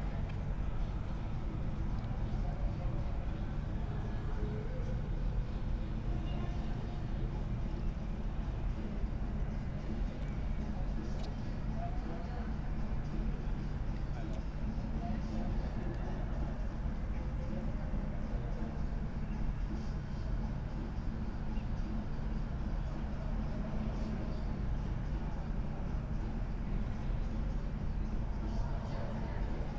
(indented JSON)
{
  "title": "Schiffbauerdam, Berlin, Deutschland - Government quarter, Sunday evening ambience",
  "date": "2021-05-23 21:05:00",
  "description": "Berlin, Schiffbauerdamm, Government quarter, between buildings, river Spree, Sunday evening after the relaxation of Corona lockdown rules\n(SD702, DPA4060)",
  "latitude": "52.52",
  "longitude": "13.38",
  "altitude": "33",
  "timezone": "Europe/Berlin"
}